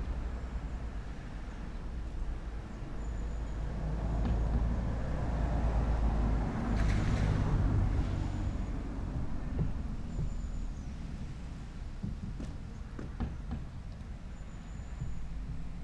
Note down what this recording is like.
Recording from my garden just as lockdown is really easing, on World Listening Day using Rode microphones in ORTF configuration onto a Zoom F6 recorder. Weather conditions are light rain #wld2020 #worldisteningday